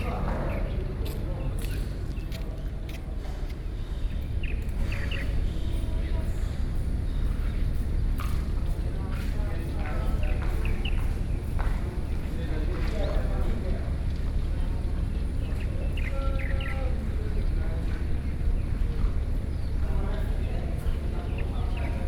金山區漁會, New Taipei City - Bird sounds with workers
Bird sounds with workers, Fishermen resting place
Sony PCM D50+ Soundman OKM II